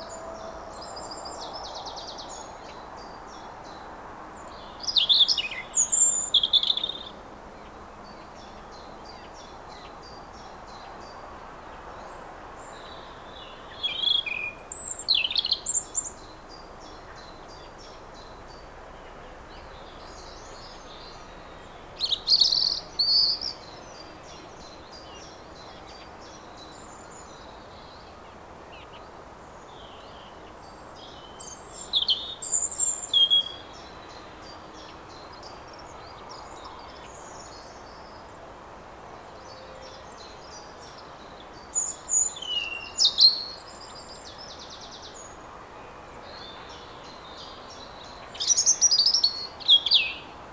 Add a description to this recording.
Birds near Grande Halle, Colombelles, France, Zoom H6